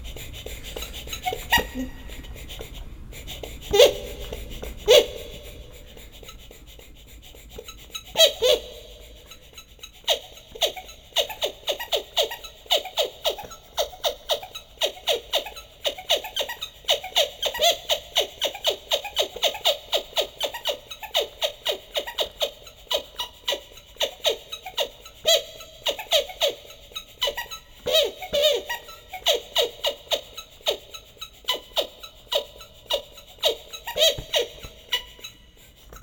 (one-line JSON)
{"title": "wasserorchester, quietsch pumpen 01", "description": "H2Orchester des Mobilen Musik Museums - Instrument Quietsch Pumpen - temporärer Standort - VW Autostadt\nweitere Informationen unter", "latitude": "52.43", "longitude": "10.80", "altitude": "62", "timezone": "GMT+1"}